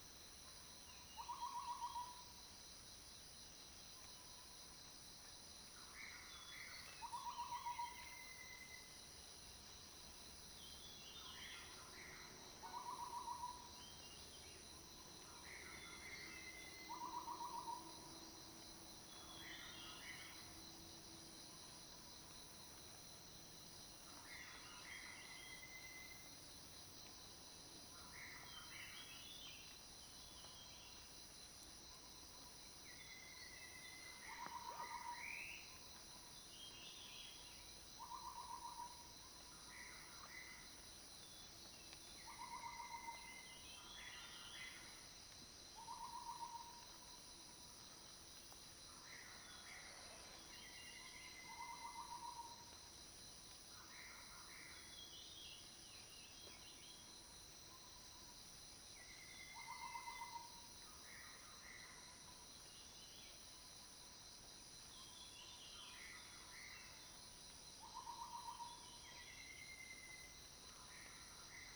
{
  "title": "Lane 水上, 桃米里, Puli Township - Birdsong",
  "date": "2016-07-14 05:04:00",
  "description": "early morning, Faced with bamboo, Birdsong\nZoom H2n Spatial audio",
  "latitude": "23.94",
  "longitude": "120.92",
  "altitude": "555",
  "timezone": "Asia/Taipei"
}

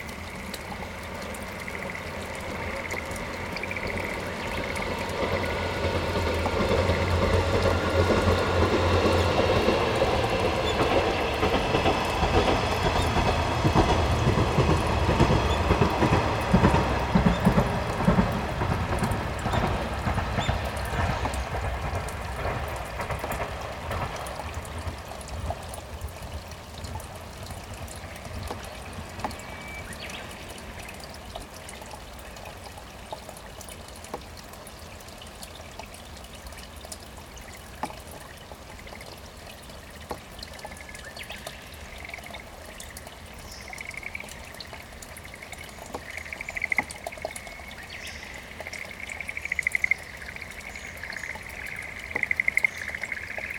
{
  "title": "Kannonyama rice fields - Kodama?",
  "date": "2016-04-04 14:37:00",
  "description": "I was recording another river just outside the mountain village of Seki when I suddenly heard the most curious sound coming from a satoyama just behind me. This mountain in particular was absolutely marvellous to listen to and walk through and had an ancient and mystical splendour about it, so I had already developed a kind of reverence for it. As a result, when I first heard this sound that I couldn’t identify I assumed it was some sort of mountain spirit. Turns out it was dozens – if not hundreds – of tiny invisible frogs that would sing out in unison, but would fade out at any sign of movement amidst the mountains and fade back in once the apparent threat had passed (in this recording you can hear this affect caused by a passing train in the distance as well as when I stood up to stretch my legs).",
  "latitude": "34.85",
  "longitude": "136.37",
  "altitude": "117",
  "timezone": "Asia/Tokyo"
}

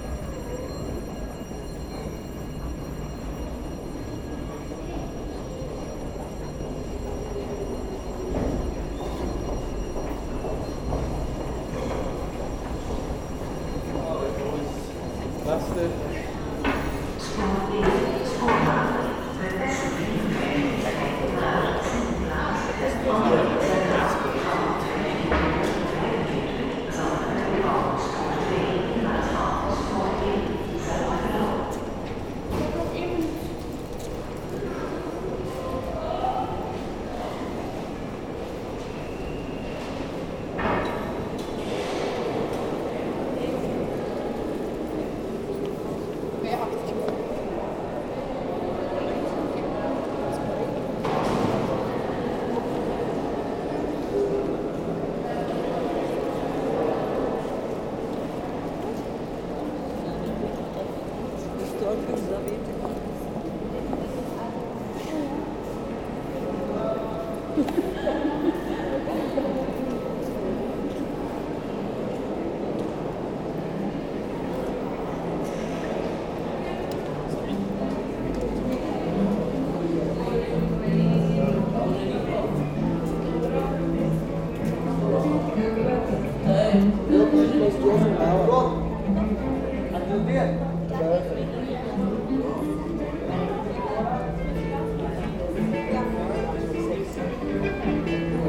{
  "title": "Gent, België - Gent station",
  "date": "2019-02-16 15:45:00",
  "description": "The old Sint-Pieters station of Ghent. Lot of intercity trains coming, and after, an escalator in alarm. Noisy ambience for a Saturday afternoon.",
  "latitude": "51.04",
  "longitude": "3.71",
  "altitude": "12",
  "timezone": "Europe/Brussels"
}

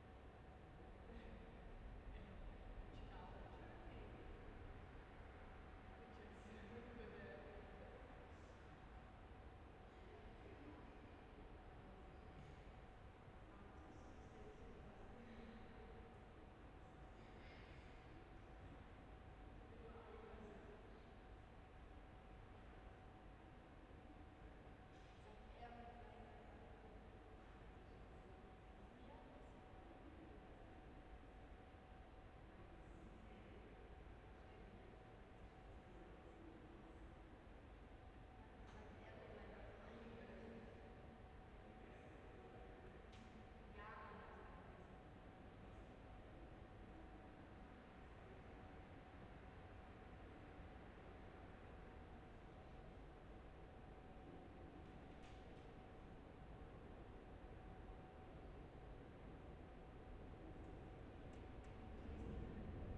{
  "title": "Gleisdreieck, Kreuzberg, Berlin - saturday night station ambience",
  "date": "2012-03-24 22:25:00",
  "description": "station ambience at Gleisdreieck on a saturday night. the whole area around Gleisdreieck has been a wasteland for decades and is now transforming rapidely into a leisure and recreation area.",
  "latitude": "52.50",
  "longitude": "13.37",
  "altitude": "36",
  "timezone": "Europe/Berlin"
}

